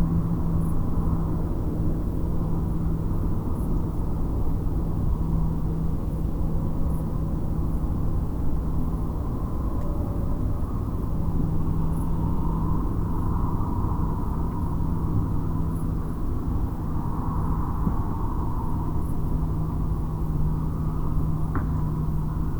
Sounds of the Night - 2, Malvern, UK
This recording starts at 2 am on a busy night with workmen removing the stage after a show, traffic, dogs, voices, muntjac, trucks, jets and alarms. The mics are on the roof again facing east into the wind towards the Severn Valley with the slope of the land and the breeze bringing the sounds up from below. Recorded in real time by laying the mics on the roof which is angled at 45 degrees. This seems to exaggerate the stereo image and boost the audio maybe by adding reflected sound. The red marker on the map is on the area where most of the sounds originate.
MixPre 6 II with 2 Sennheiser MKH 8020s in a home made wind jammer.
England, United Kingdom, 29 August